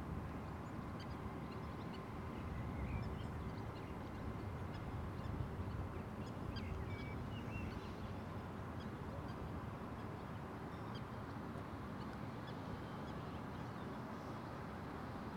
Kruununhaka, Helsinki, Finland - Pier
Morning sleep on pier